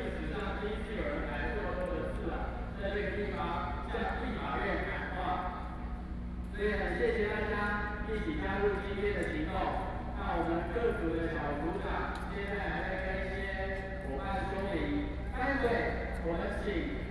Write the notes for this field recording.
Protest against nuclear power, Zoom H4n+ Soundman OKM II